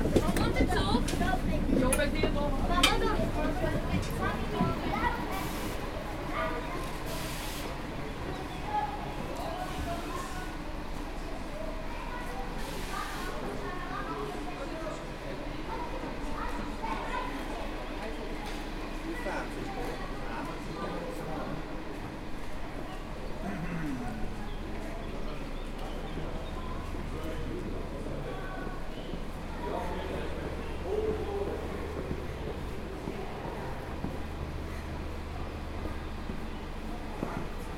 Postauto nach Dornach für die Weiterfahrt ins Laufental und ins Oberbaselbiet
Postauto nach Dornach, Wandersocken und Familien, Geplauder, viele Rucksäcke und Wurst für die Feuerstellen